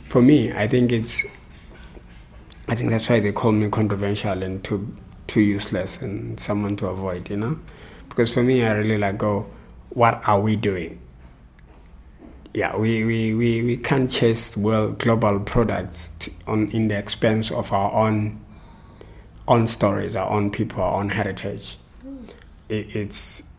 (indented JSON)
{
  "title": "Amakhosi Cultural Centre, Makokoba, Bulawayo, Zimbabwe - Empty archives...",
  "date": "2012-10-29 14:00:00",
  "description": "… the same counts for books published in the country, Cont continues… “what are we doing…?! … we are not capturing our own footsteps for future generations…”",
  "latitude": "-20.14",
  "longitude": "28.58",
  "altitude": "1328",
  "timezone": "Africa/Harare"
}